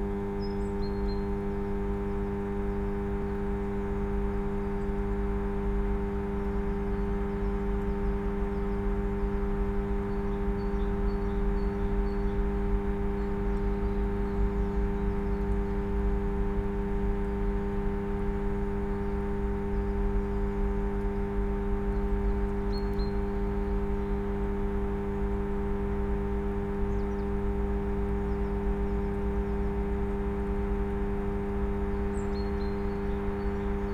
Am Sandhaus, Berlin-Buch, Deutschland - transformer station hum
former Stasi / GDR government hospital area, transformer station hum (still in operation)
(Sony PCM D50, DPA4060)